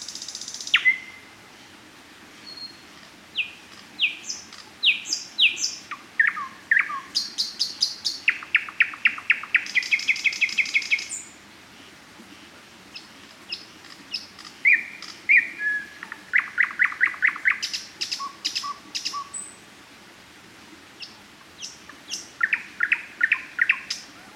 jubilant nightingale, south Estonia
had to record this nightingale as it is singing for days now
Põlva County, Estonia